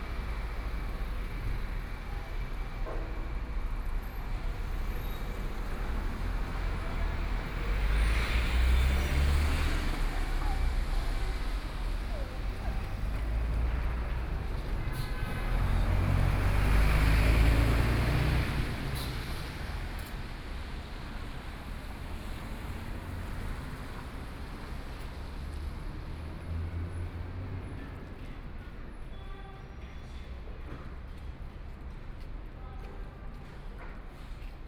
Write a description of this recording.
Construction site noise, Traffic Sound, Binaural recording, Zoom H6+ Soundman OKM II